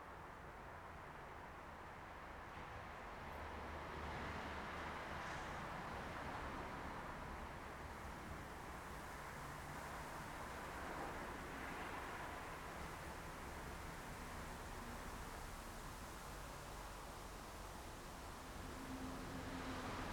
Outside reception at Purcell School
Hertfordshire, UK, 16 August